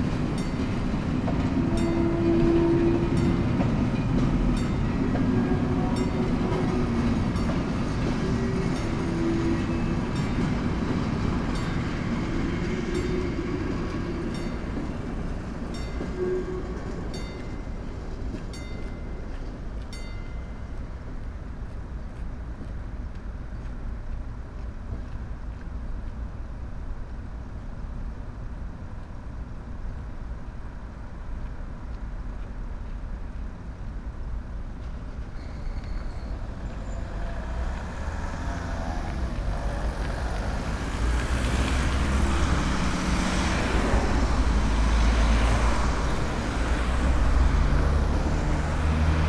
Hafenviertel, Linz, Österreich - bahnübergang
bahnübergang, haben linz